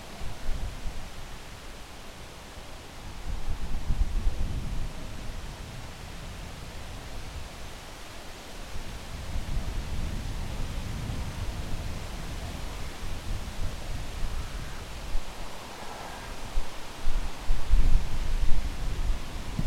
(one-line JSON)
{"title": "Kampenhout, Belgique - Un chemin campagnard aligné sur un aéroport", "date": "2015-09-29 13:31:00", "description": "Balayée par le vent, la Schoonstraat (Kampenhout) s'accorde parfois sur le son d'un avion\nMatériels utilisés : Zoom H6 + Micro Omni\nTemps : Clair, ensoleillé", "latitude": "50.93", "longitude": "4.61", "altitude": "14", "timezone": "Europe/Brussels"}